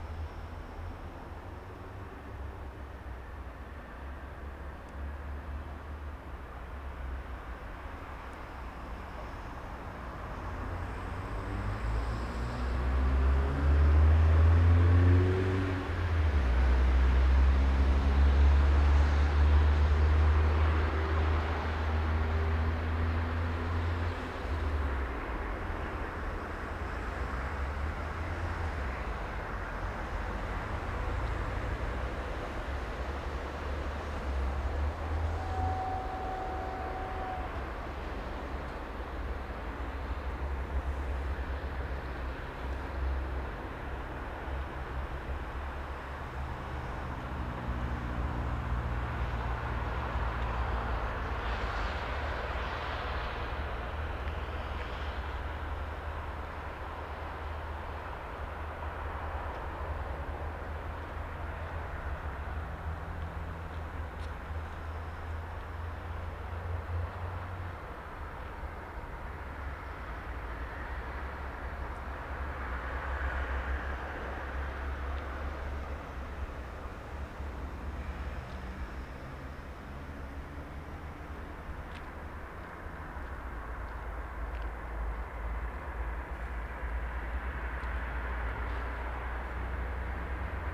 Olsztyn, Polska - West train station (4)
Train announcement. Train arrival, departure and at the same momoent cargo train is passing by.